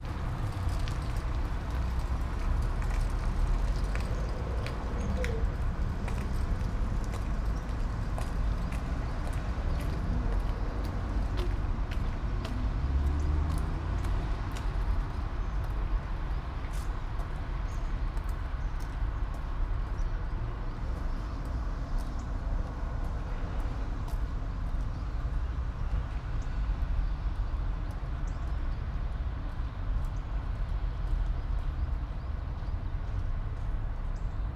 all the mornings of the ... - feb 7 2013 thu
Maribor, Slovenia, 7 February 2013, 07:40